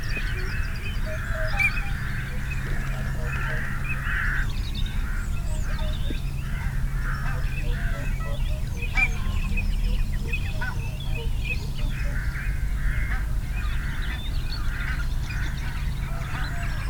Dumfries, UK - teal call soundscape ...
teal call soundscape ... dpa 4060s clipped to bag to zoom f6 ... folly pond ... bird calls from ... whooper swan ... shoveler ... robin ... blackbird ... canada geese ... wigeon ... song thrush ... redwing ... barnacle geese ... rook ... crow ... time edited extended unattended recording ... love the wing noise from incoming birds ... possibly teal ...
Alba / Scotland, United Kingdom, 4 February